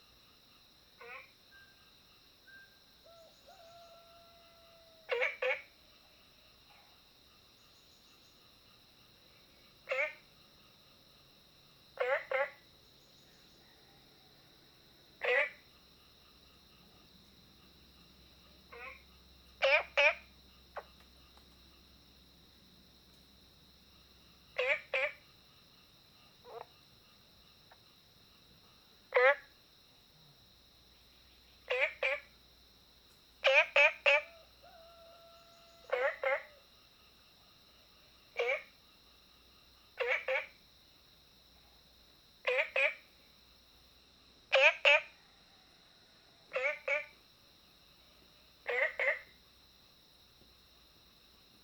Frogs chirping, Ecological pool, Early morning, Chicken sounds
Zoom H2n MS+XY
Puli Township, Nantou County, Taiwan